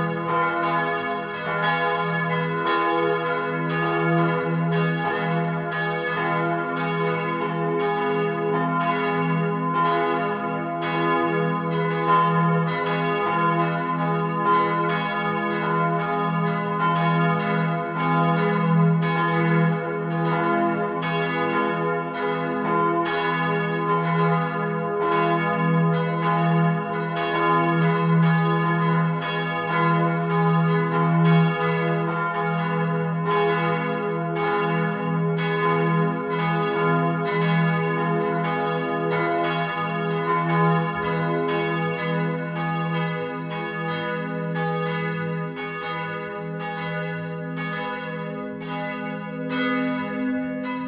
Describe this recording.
Sunday noon bells on the bell tower of the Kostel Narození Panny Marie, from above and under